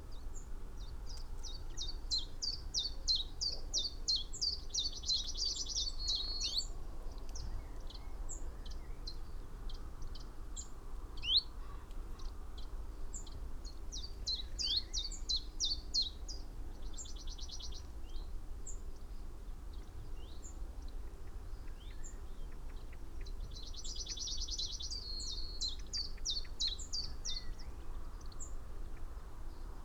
Malton, UK - chiffchaff nest ...
chiffchaff nest ... xlr sass on tripod to zoom h5 ... male song ... call in tree almost above nest ... female calling as visits nest ... fledgling calling from nest ... 12:40 fledgling(s) leave nest ... song calls from ... dunnock ... yellowhammer ... wren ... blackbird ... pied wagtail ... pheasant ... whitethroat ... crow ... blue tit ... background noise ...